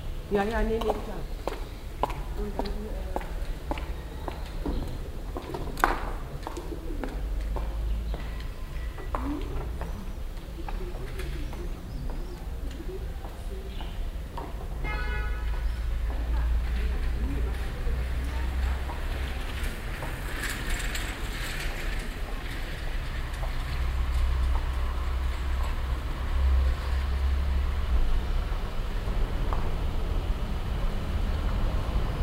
soundmap: cologne/ nrw
atmo in kleiner kopfsteinbedeckter strasse, morgens - tauben, fahrradfahrer, fahrzeuge, stimmen
project: social ambiences/ listen to the people - in & outdoor nearfield recordings